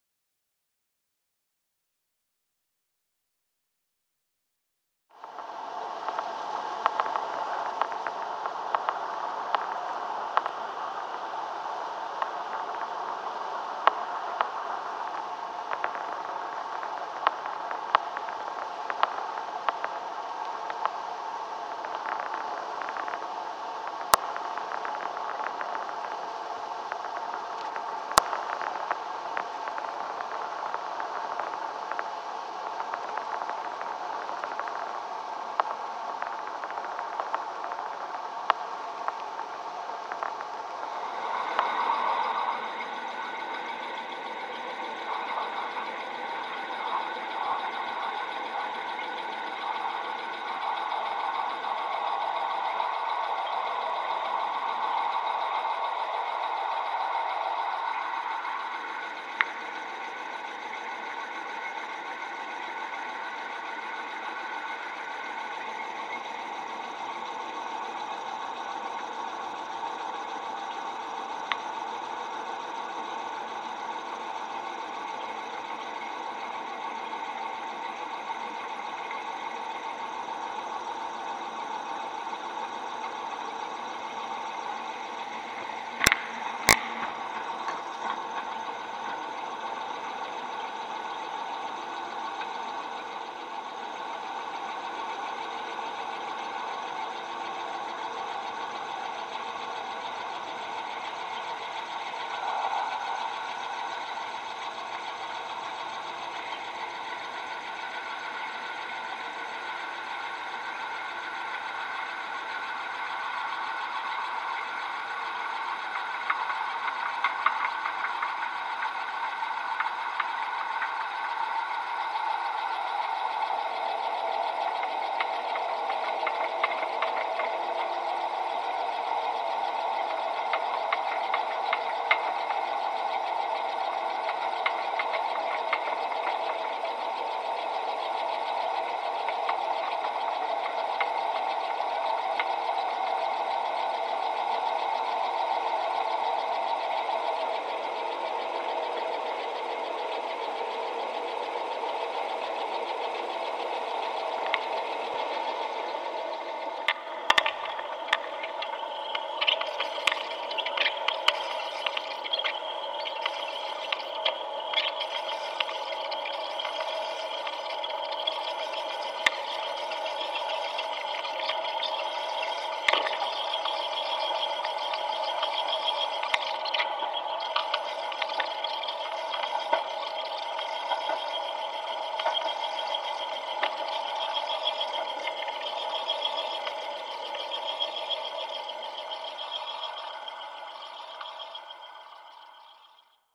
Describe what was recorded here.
Mine 7 is the only active mine in Longyearbyen and provides the town with coal. The recordings are from in the mine. The noise level inside is immense and I recorded by using contact mics on the different infrastructure connected to the machinery. The field recording is a part of The Cold Coast Archive.